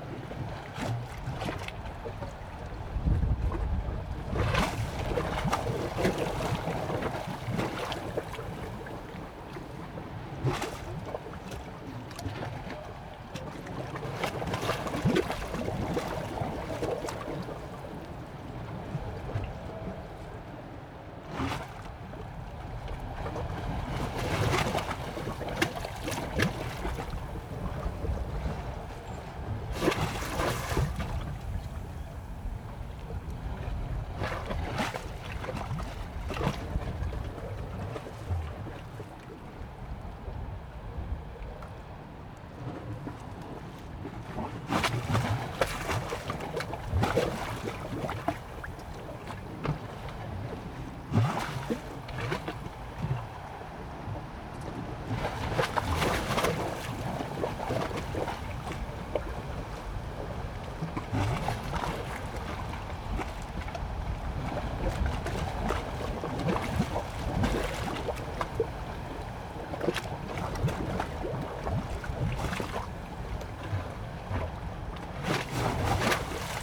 In the dock, Sound wave, Zoom H4n+ Rode NT4
New Taipei City, Taiwan, November 2011